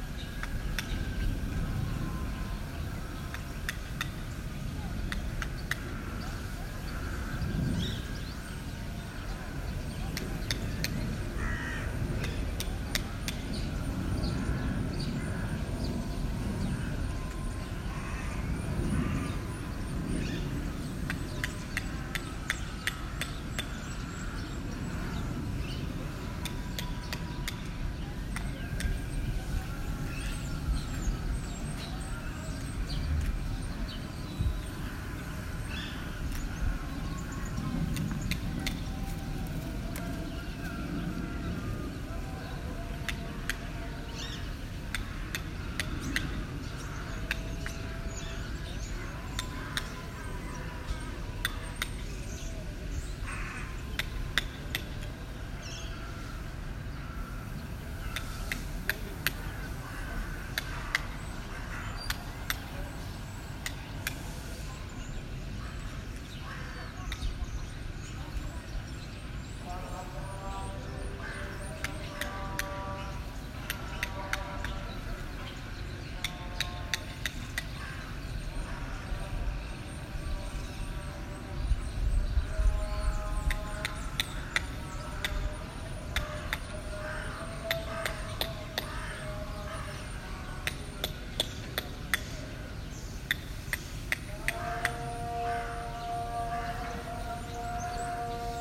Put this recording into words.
quiet atmosphere inside the Golestan Palace - in contrast to the vibrant surroundings